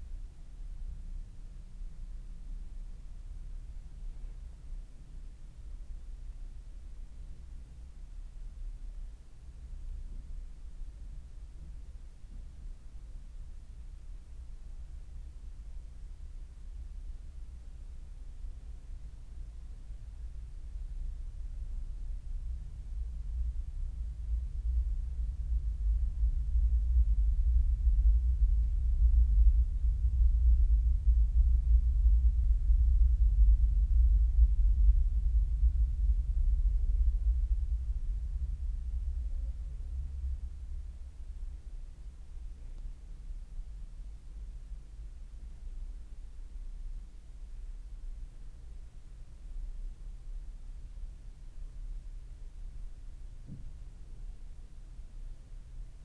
Mitte, Berlin, Germany - The Room of Silence
(binaural)Field recordings of 'The Room of Silence'